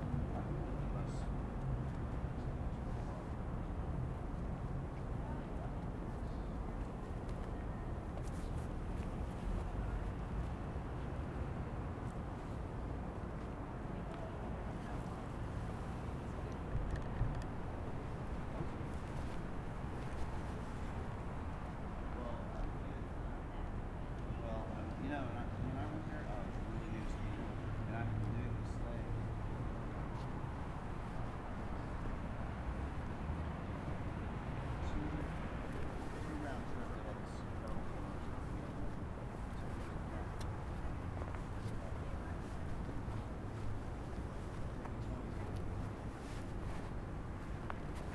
the abuse these beautiful peoples suffered and so many countless others in similar situations as the americas and other places were colonized by the christian terrorists of centuries previous and the current times can not be fathomed in the breadth and width of its brutality and heartlessness. they amd other non white non christian people were forced into slave labor to build disgusting places like this that stand and are celebrated to this day as symbols and realities of the ongoing settler colonialist genocide.